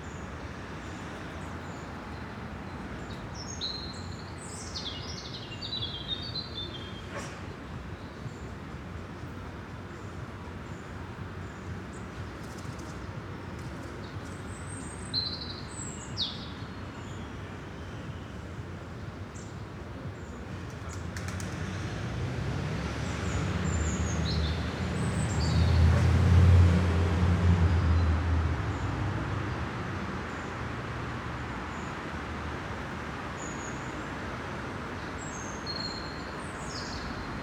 Isebek-Kanal, Kaiser-Friedrich-Ufer, Hamburg, Deutschland - canal ambience
Hamburg, Isebek-Kanal at Eimsbütteler Brücke, late morning in spring, ambience /w birds (Robin & others), waves of traffic from above, two paddlers
(Sony PCM D50, Primo EM272)